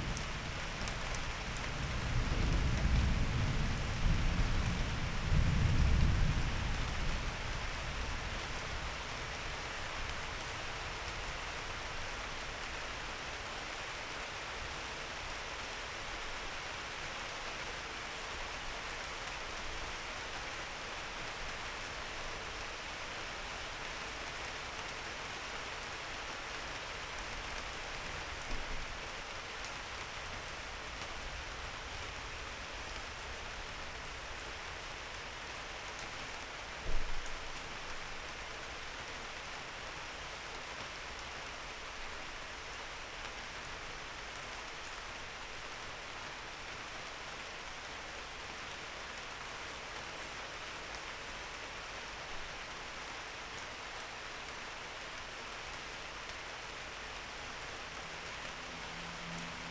Berlin Bürknerstr., backyard window - it begins to rain
its raining finally
17 July 2010, 02:00